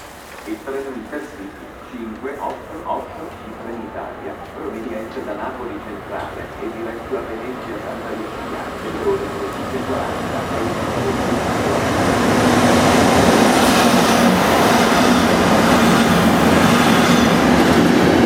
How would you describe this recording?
Jumping on the train from Rifredi railway station. the station is more quiet than others, but noise from the the train brakes when stopping is really disturbing to our ears...